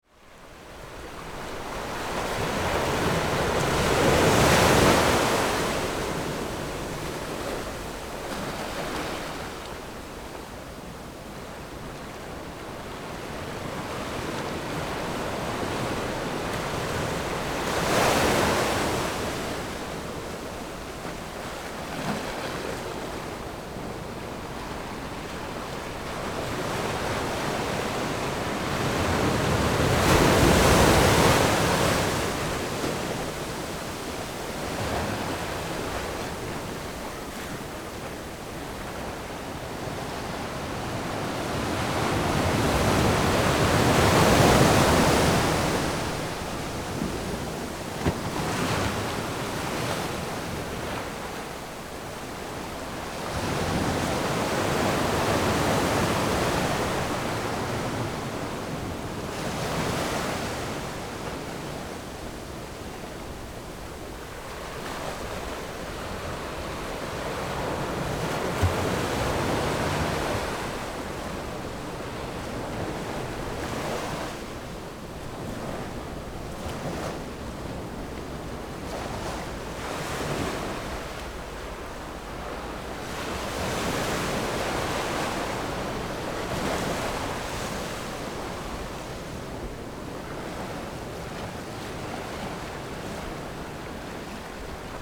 芹壁村, Beigan Township - Sound of the waves
Sound of the waves, Small port, Pat tide dock
Zoom H6 +Rode NT4